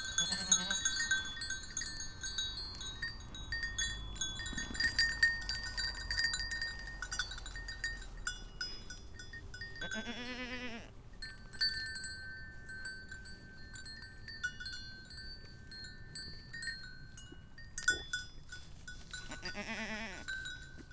Mendele, Beselich Niedertiefenbach - herd of goats, bells
a herd of curious goats is approaching the recordist, who himself became curious about ringing bells from afar
(Sony PCM D50, Primo EM172)
Regierungsbezirk Gießen, Hessen, Deutschland